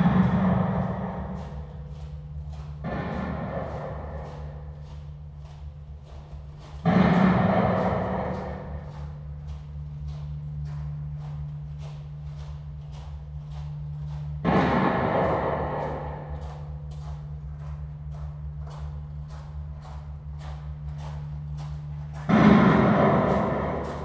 鐵漢堡, Lieyu Township - Underground tunnels
Analog mines, Abandoned military facilities
Zoom H2n MS +XY
福建省, Mainland - Taiwan Border, 4 November 2014